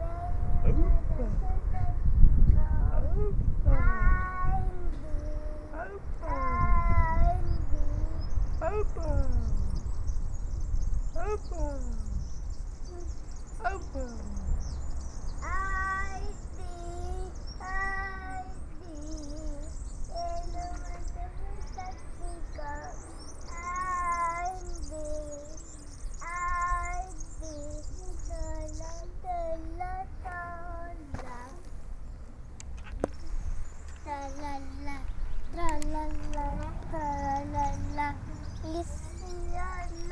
Caterina sing on a swing
TE, ABR, Italia, 15 May 2010